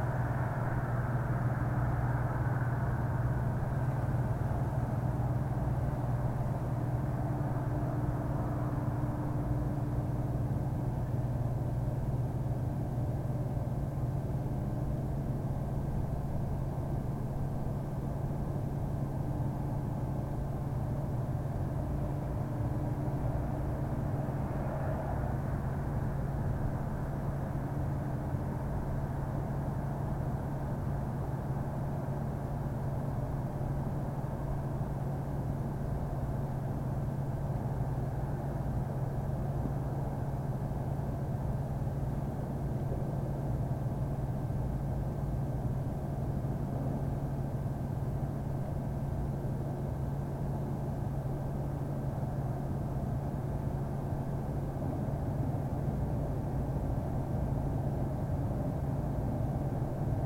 Crescent Heights, Calgary, AB, Canada - thing that was on a wall
In the desperate search for things that buzzed or created its own ambient noise, I found a weird box on a wall. Annnnd this was the weird box. I used a glove as a wind sock but I think it worked pretty okay
Zoom H4N Recorder
December 2015